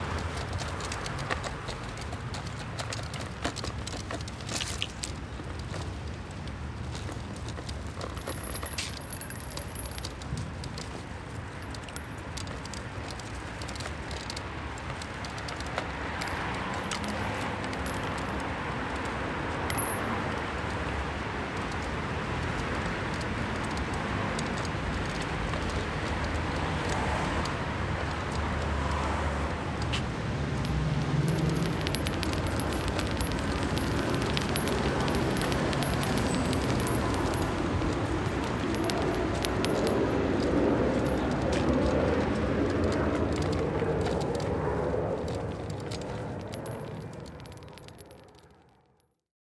Wollankstraße, Soldiner Kiez, Wedding, Berlin, Deutschland - Wollankstraße 61, Berlin - Walking frame on cobble stone
Eine ältere Dame mit Hund schiebt ihren Rollator über die Pflastersteine - an der Hundeleine baumelt ein kleiner Metallring, der über den Stein schleift und dabei ein klingelndes Geräusch verursacht.